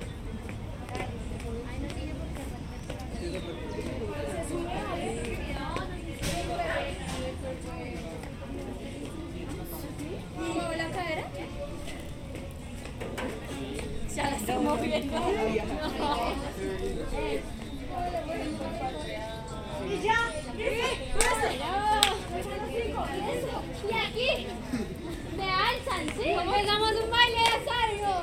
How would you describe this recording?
Es la jornada 2022 de Interludios, un espacio de reflexión y creación desde las artes escénicas, dramaturgias y sonoras. Registrado en formato bianual con Zoom H3-VR